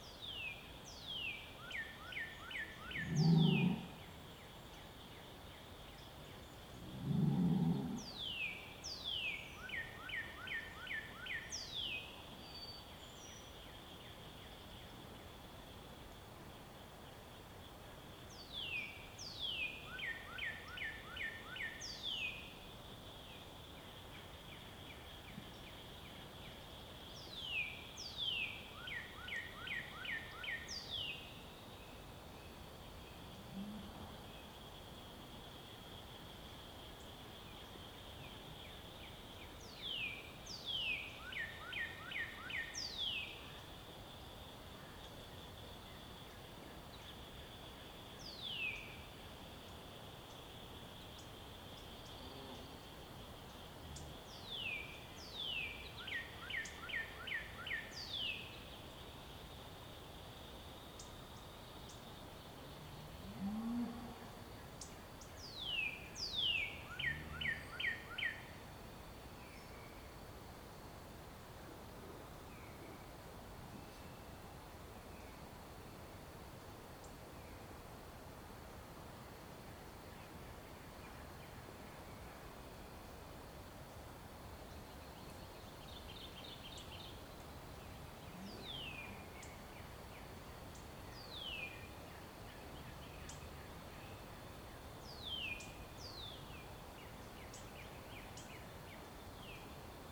Missouri, USA - Bulls and cows in a field in Missouri, USA
Some bulls and cows calling and mooing in the countryside of Missouri. Birds and light wind in the trees in background. Sound recorded by a MS setup Schoeps CCM41+CCM8 Sound Devices 788T recorder with CL8 MS is encoded in STEREO Left-Right recorded in may 2013 in Missouri, close to Bolivar (an specially close to Walnut Grove), USA.